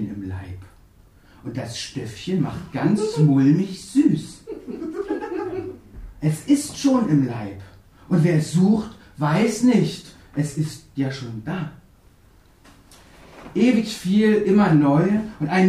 Der Kanal, Weisestr. 59. Auschschnitt aus dem 4. Synergeitischen Symposium - Der Kanal, Ausschnitt aus dem 7. Synergeitischen Symposium
A seventh time have we come together to gather all our wicked letters becoming texts. It turns out, DER KANAL is becoming more and more an orphanage of unread poems. Presented are two extracts from the six hour lecture held in decembre. The first text is entitled ::Glücklich werden::
Berlin, Germany, 17 December 2011, 19:45